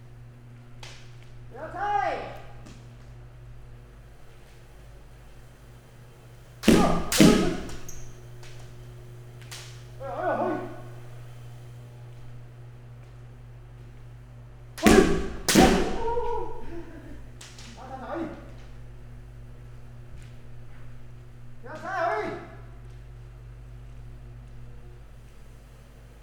검도관 with bamboo swords Kendo Dojo
검도관_with bamboo swords_Kendo Dojo